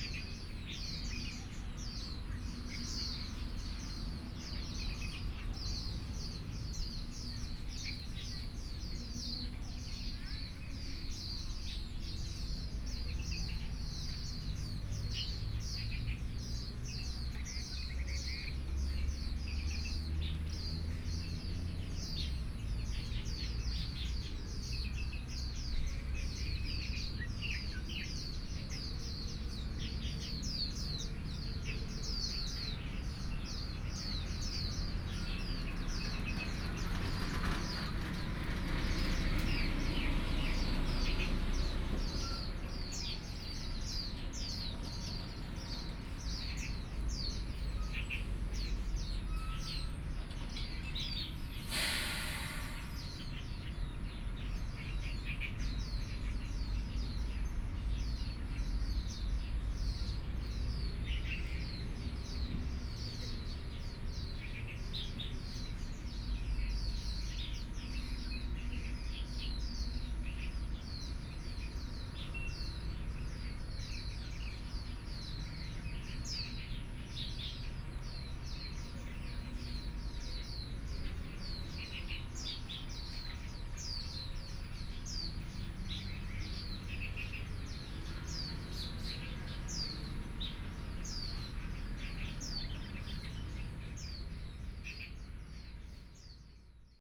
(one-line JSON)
{"title": "宜蘭運動公園, Yilan City - in the Park", "date": "2014-07-26 10:30:00", "description": "in the Park, Traffic Sound, Birds", "latitude": "24.74", "longitude": "121.75", "altitude": "8", "timezone": "Asia/Taipei"}